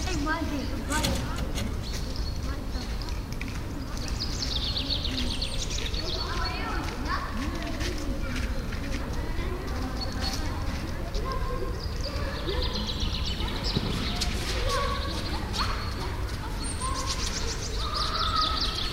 ratingen west, spielplatz in siedlung
spielplatz zwischen wohnhauskästen
morgens - das überfliegen des stetem flugverkehrs
project: :resonanzen - neanderland soundmap nrw: social ambiences/ listen to the people - in & outdoor nearfield recordings